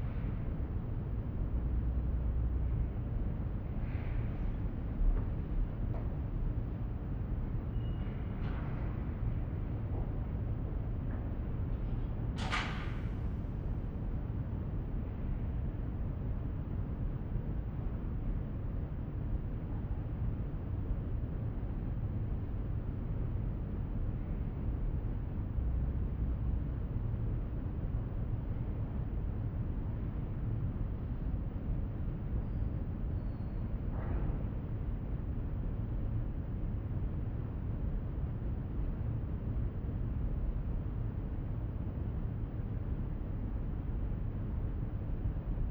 Unterbilk, Düsseldorf, Deutschland - Düsseldorf, Landtag NRW, plenar hall
Inside the plenar hall of the Landtag NRW. The sound of the ventilations and outside ambience reflecting in the circular room architecture. Also to be haerd: door movements and steps inside the hall.
This recording is part of the exhibition project - sonic states
soundmap nrw - sonic states, social ambiences, art places and topographic field recordings